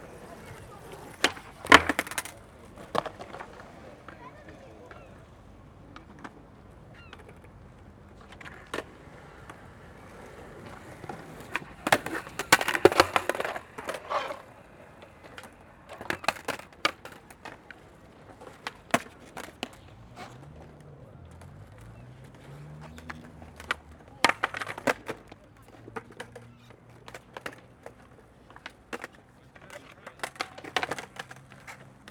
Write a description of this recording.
In Brighton at the Level - a public skater park - the sounds of skating, soundmap international: social ambiences, topographic field recordings